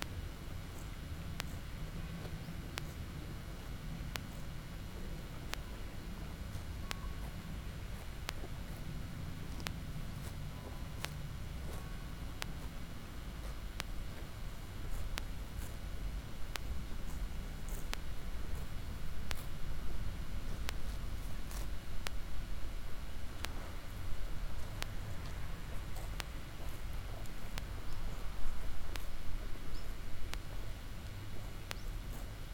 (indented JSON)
{
  "title": "pintsch, cow pasture and electric fence",
  "date": "2011-09-13 12:23:00",
  "description": "On a footpath nearby a cow pasture with an electric fence. The sound of cows eating the meadow, the soft gurgling of a nearby small stream in the background and the permanent electric pulse of the electric fence. In the far distance the sound of the church bells.\nPintsch, Kuhweide und elektrischer Zaun\nAuf einem Fußweg nach einer Kuhweide mit einem elektrischen Zaun. Das Geräusch von fressenden Kühen, das sanfte Gurgeln eines nahen Baches im Hintergrund und der ständige elektrische Schlag des Elektrozauns. In der Ferne das Läuten von Kirchenglocken.\nPintsch, pâture pour les vaches et clôture électrifiée\nSur un chemin a proximité d’une pâture pour vaches avec une clôture électrifiée. Le bruit de vaches broutant dans la prairie, le doux glouglou d’un petit ruisseau dans le fond et les décharges électriques régulières de la clôture sous-tension. Dans le lointain, on entend sonner les cloches d’une église.",
  "latitude": "49.99",
  "longitude": "6.01",
  "altitude": "320",
  "timezone": "Europe/Luxembourg"
}